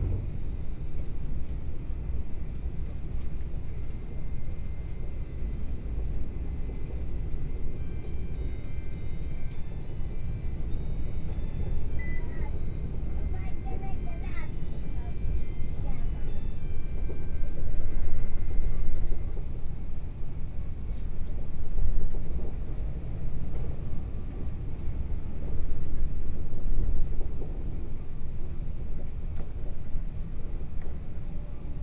Train broadcast messages, from Taipei Station to Songshan Station, Zoom H4n+ Soundman OKM II